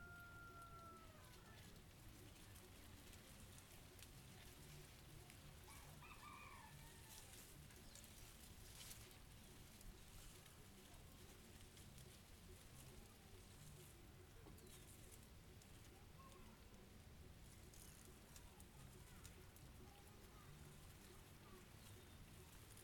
Field record made in rural areas close to San Vicente, Antioquia, Colombia.
Guadua's trees been shaked by the wind.
Inner microphones Zoom H2n placed 1m over the ground.
XY mode.
Antioquia, Colombia, 15 September 2013